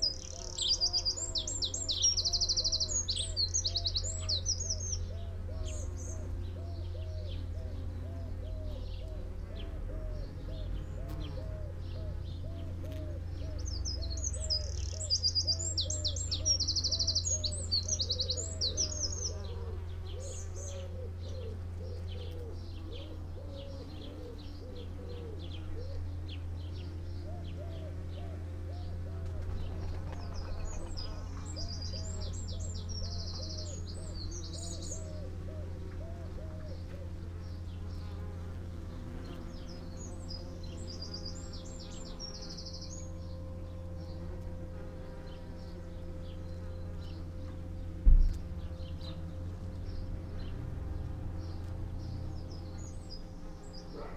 Chapel Fields, Helperthorpe, Malton, UK - bees on lavender ...
bees on lavender ... xlr sass skyward facing to zoom h5 ... between two lavender bushes ... unattended time edited extended recording ... bird calls ... song ... from ... dunnock ... coal tit ... wood pigeon ... swallow ... wren ... collared dove ... blackbird ... house sparrow ... house martin ... blue tit ... goldfinch ... linnet ... plenty of traffic noise ...
8 July 2022, 09:30